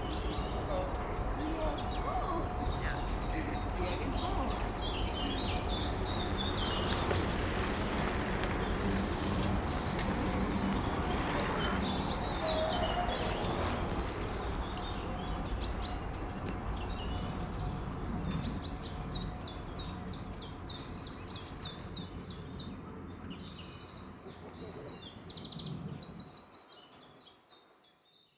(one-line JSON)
{"title": "Heisingen, Essen, Deutschland - essen, baldeney sea, biker and skater", "date": "2014-04-12 10:00:00", "description": "Auf einem Radweg nahe des Baldeney See's. Die Klänge von Skatern und Fahrradfahrern auf betoniertem Radweg inmitten von Vogelstimmen.\nOn a bicycle track near to the Baldeney lake. The sounds of bikes and skaters passing by.\nProjekt - Stadtklang//: Hörorte - topographic field recordings and social ambiences", "latitude": "51.39", "longitude": "7.07", "altitude": "61", "timezone": "Europe/Berlin"}